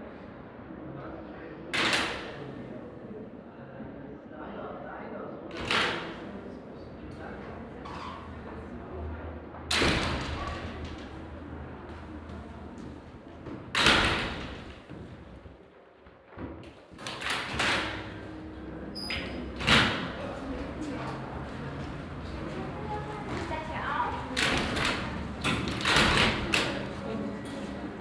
Im Eingangsbereich des Museums Folkwang. Der Klang zweier großer Doppeltüren aus Glas und Metall beim Öffnen und Schliessen durch die Besucher.
At the entry of the museum Folkwang. The sound of two double doors out of glas and metal. The sound of the opening and closing by the museum visitors.
Projekt - Stadtklang//: Hörorte - topographic field recordings and social ambiences